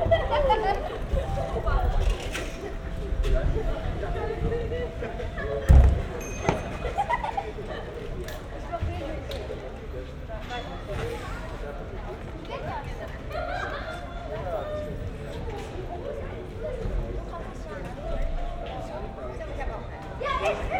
Weidengasse, Köln - Friday evening street live
ambience in Weidengasse, Köln, Friday evening, in front of a restaurant, preparations for a wedding